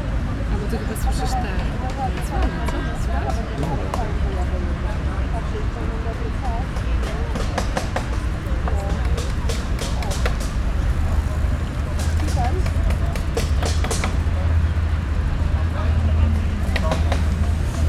Gdańsk, Polska - IKM picnic 6
Dźwięki nagrano podczas pikniku zrealizowanego przez Instytut Kultury Miejskiej.